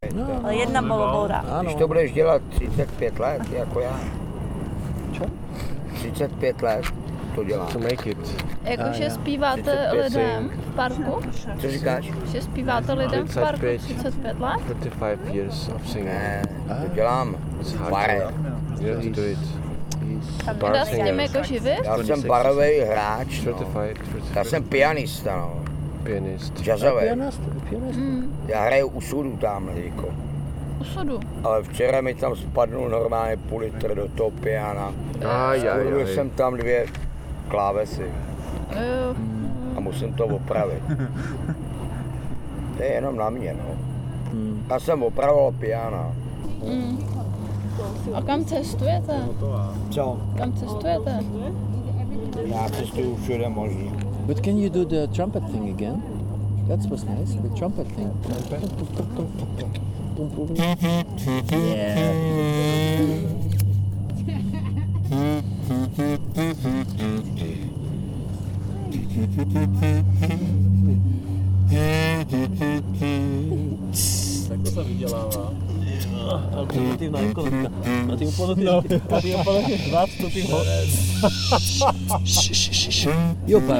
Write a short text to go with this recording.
This recording was recorded already last summer. Crickets in summer night atmosphere, voices of my friends and signing of the rambling pianist. You can simply sit on grass with amazing view of Vltava river and all Prague in Letná park. There is enough beer liquids, which you can buy in kiosk, that is just few meters above the tunnel (Letenský tunel).